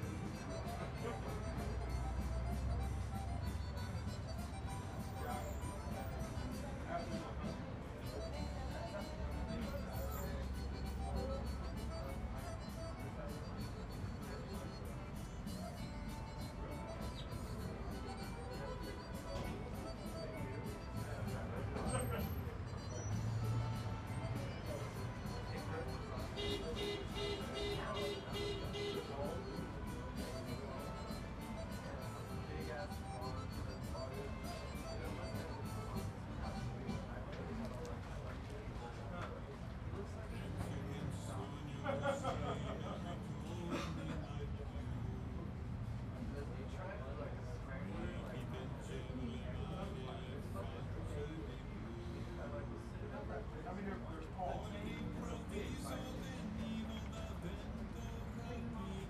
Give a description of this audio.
Genova delicatessen - Italian deli, Oakland, Rockridge district